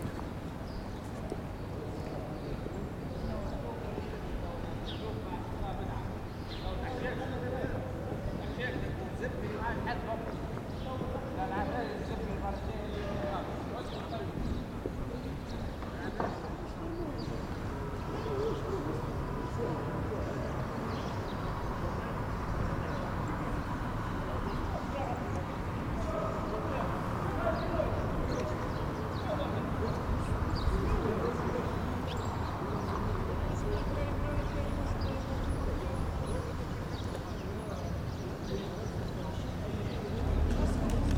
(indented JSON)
{
  "title": "Place Meynard, Bordeaux, France - Place Saint Michel, Bordeaux, France",
  "date": "2020-03-29 12:30:00",
  "description": "A recording near the waterfront then on place Saint Michel, a sunday in spring its usually crowded, with a flea market and peoples at cafés and restaurants. We can hear the echo of the empty place with the few people talking.\nThe place where I live, never sounded like that before.",
  "latitude": "44.83",
  "longitude": "-0.57",
  "altitude": "14",
  "timezone": "Europe/Paris"
}